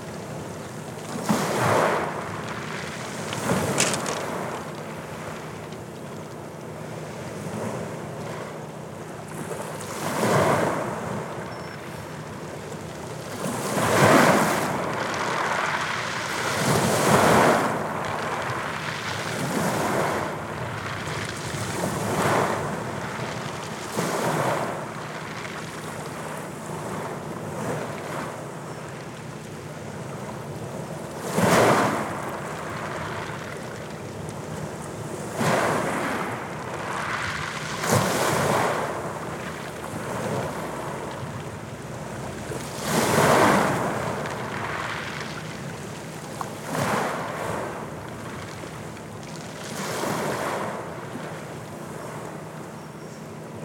Medveja, Medveja, waves@beach
waves washing the beach in Winter time.